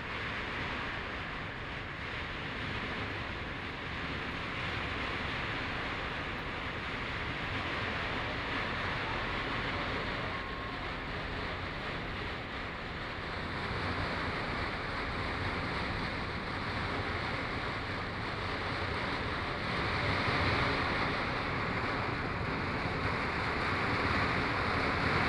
Bihu Park, Taipei City - The sound of firecrackers
Distance came The sound of firecrackers
Please turn up the volume a little. Binaural recordings, Sony PCM D100+ Soundman OKM II